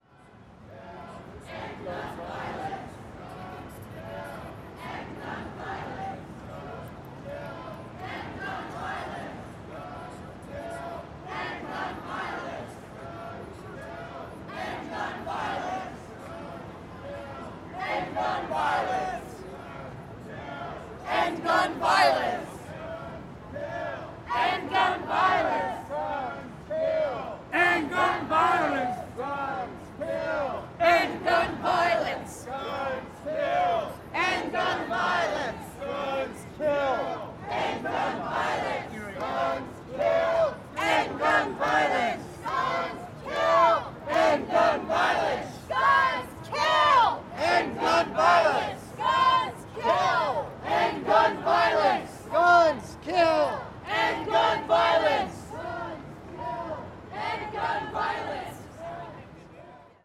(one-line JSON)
{"title": "Times Square, New York, NY, USA - GAYS Against GUNS Protest March", "date": "2022-05-26 18:12:00", "description": "People with the organization GAYS Against GUNS chant \"Guns Kill...End Gun Violence\" as they march to Father Duffy Square in Times Square to protest gun violence in the United States. Cloudy ~70 degrees F. Tascam Portacapture X8, X-Y internal mics, Gutmann windscreen, handheld. Normalized to -23 LUFS using DaVinci Resolve Fairlight.", "latitude": "40.76", "longitude": "-73.99", "altitude": "33", "timezone": "America/New_York"}